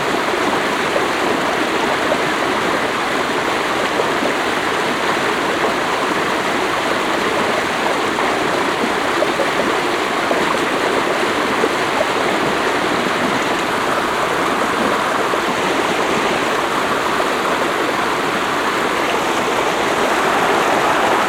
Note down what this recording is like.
On a steep hill in a forest. The sound of a vivid small stream with fresh cold water. international sound scapes - topographic field recordings and social ambiences